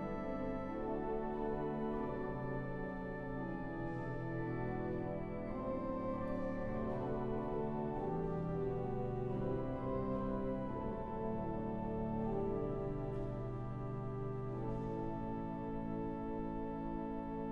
Tours, France - Organ into the cathedral
Into the Saint-Gatien cathedral, an organist is playing organ. It's Didier Seutin, playing the Veni Creator op4 from Maurice Duruflé. This recording shows the organ is good, beyond the mass use. This organ was heavily degraded, it was renovated a few years ago.